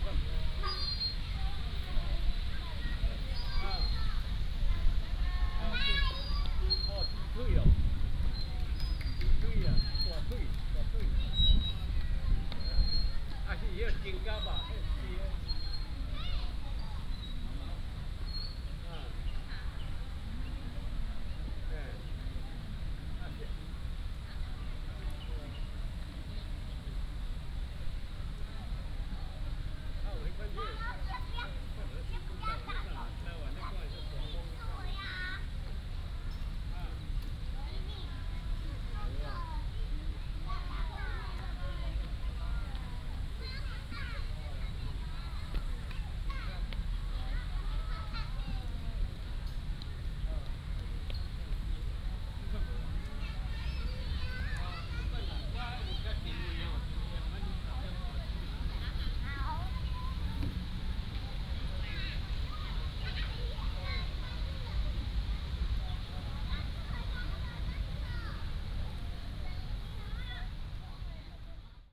臺南公園, Tainan City - Children's play area
Children's play area, Traffic sound, in the park
18 February 2017, ~16:00